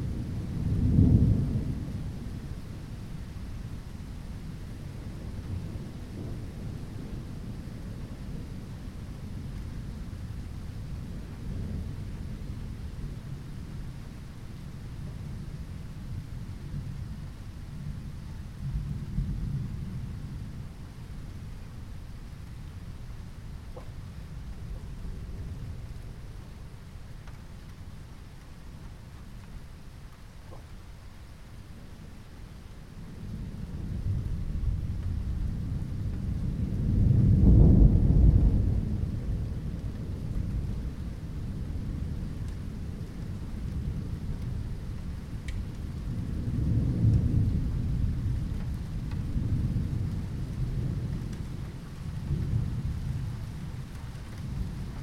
Moabit, Berlin, Germany - Moabit gets struck by lightning
Beside the lightning that struck this quartiers backyard while a thunderstorm passes Berlin, you hear the permanent rumbling sound of thunder from far away and rain noises with increasing volume
1 July 2012